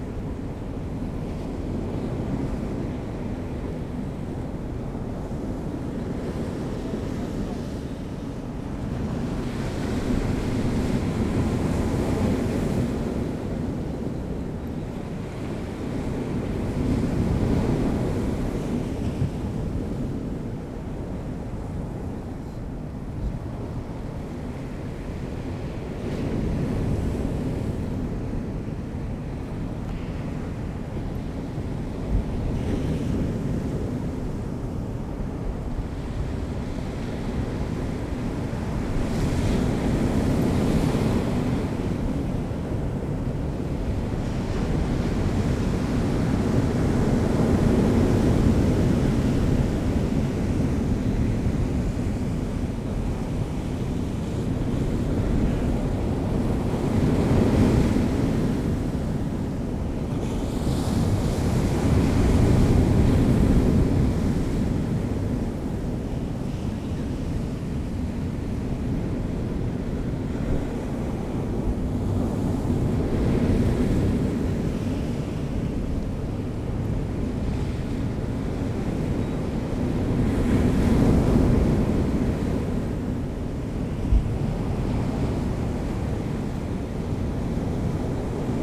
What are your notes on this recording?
incoming tide ... in the lee of a wall ... blowing a hooley ... lavalier mics clipped to a bag ...